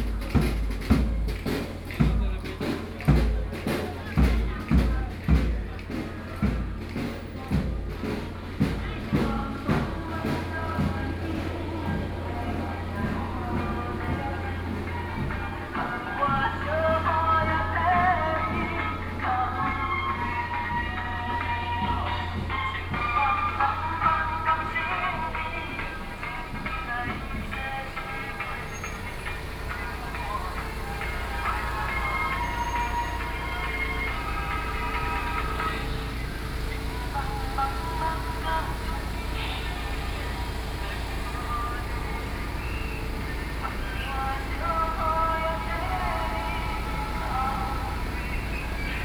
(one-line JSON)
{"title": "Kangle Rd., 宜蘭市新民里 - Festival", "date": "2014-07-26 19:51:00", "description": "Festival, Traffic Sound, At the roadside\nSony PCM D50+ Soundman OKM II", "latitude": "24.76", "longitude": "121.75", "altitude": "15", "timezone": "Asia/Taipei"}